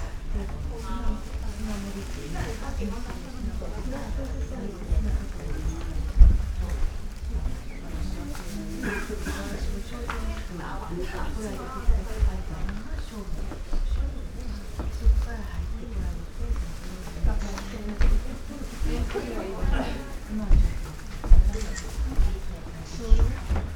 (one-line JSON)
{"title": "dry landscape garden, Kodai-ji, Kyoto - graveled ocean", "date": "2014-11-09 13:27:00", "description": "gardens sonority, veranda\nwhite and violet parasols\nhundred of them\nstacked into rain grayish gravel ocean\nnovember, time to take longer path", "latitude": "35.00", "longitude": "135.78", "altitude": "71", "timezone": "Asia/Tokyo"}